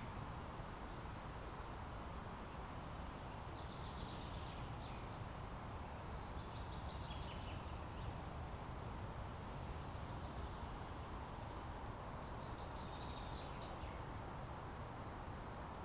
Ambient field recording for Central Park Archives project 2020.
Recorded with Zoom H4n.